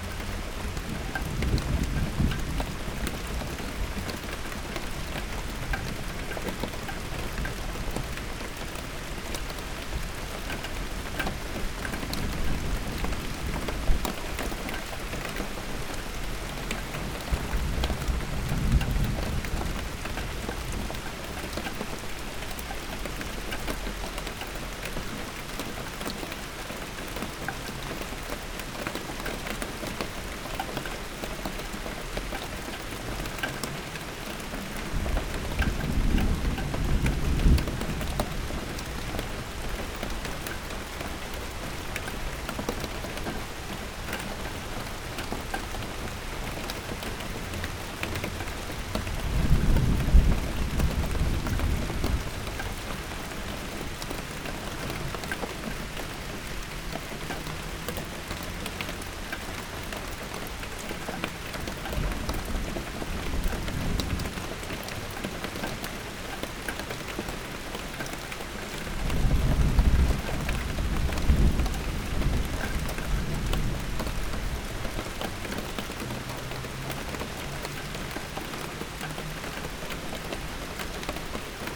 Mont-Saint-Guibert, Belgique - Rain
It's raining since a long time. It's a small storm. Just near a shed, a gutter is dismantled. Drops are falling on a old wheelbarrow.